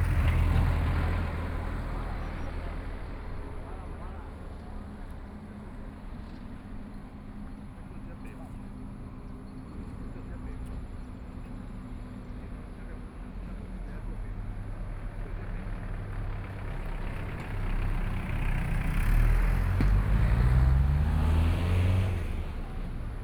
頭城鎮新建里, Yilan County - At the roadside
Fishing in the stream, Traffic Sound
Sony PCM D50+ Soundman OKM II
26 July 2014, ~18:00